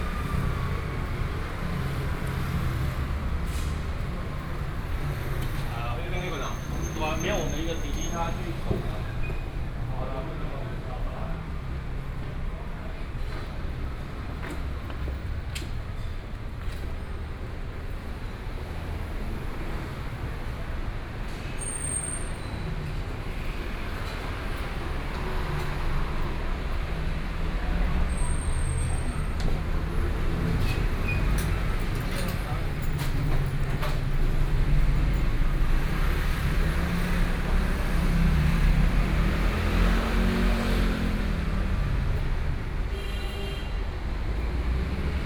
Nong'an St., Taipei City - walking in the Street
walking in the Street, Traffic Sound
Zhongshan District, Taipei City, Taiwan